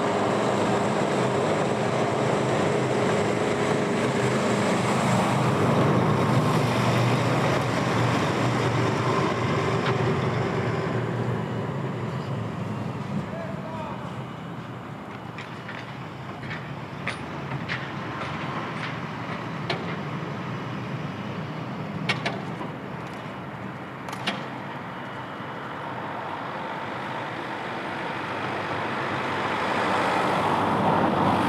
Strada Ștefan Baciu, Brașov, Romania - Winter construction works - Crane
As it was a very mild (even worryingly warm) winter, construction works on new apartment blocks restarted already. Here you can hear a crane being loaded. Not a very crowded soundscape, some cars passing by. Recorded with Zoom H2n, surround mode.
România